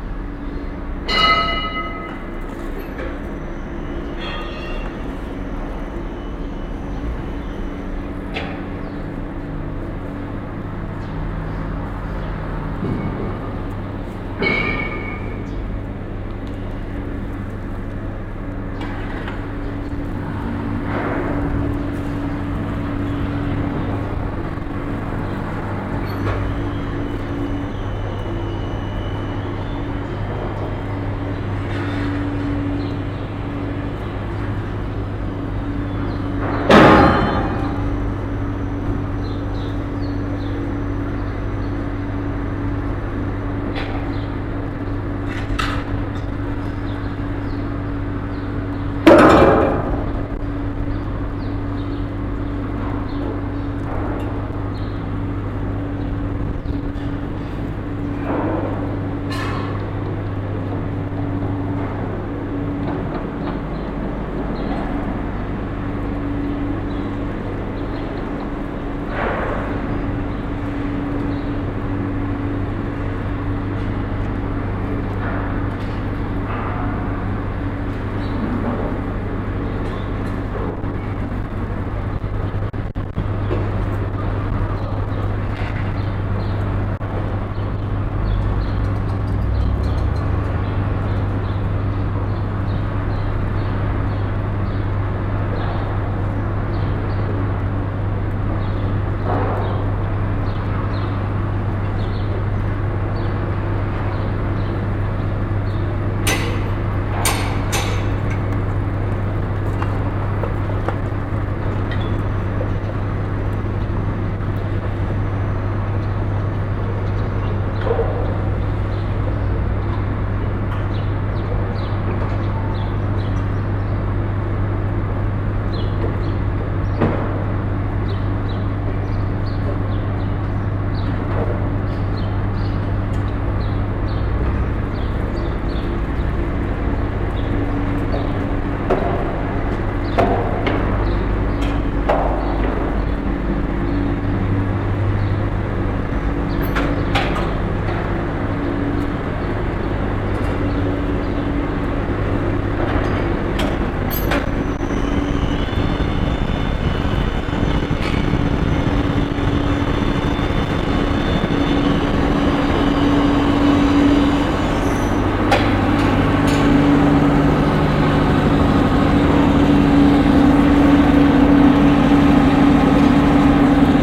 Berlin, Sybelstreet, construction - berlin, sybelstreet, construction
Outside on the street. The sound of a bigger house construction on a cold winter morning.
soundmap d - social ambiences and topographic field recordings
Berlin, Germany, 7 February